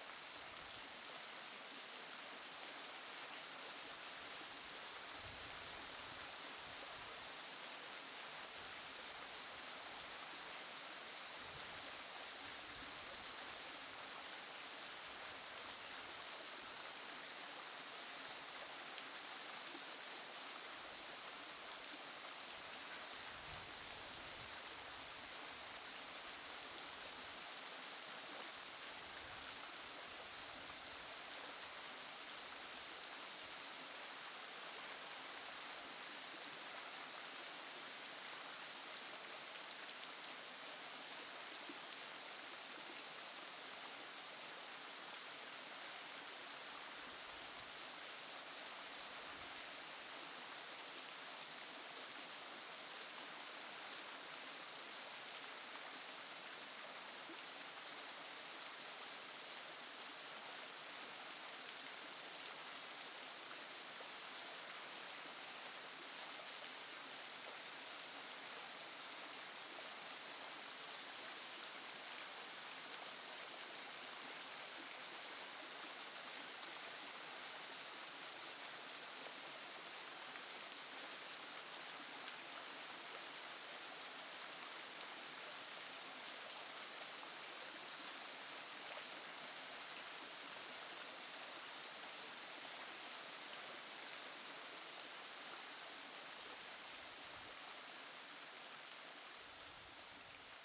Lugar Diseminados, Alicante, Espagne - Bolulla - Espagne - Font dels Xoros
Bolulla - Espagne
Font dels Xoros
Cascade de Xoros
ZOOM F3 + AKG C 451B
12 July 2022, 17:00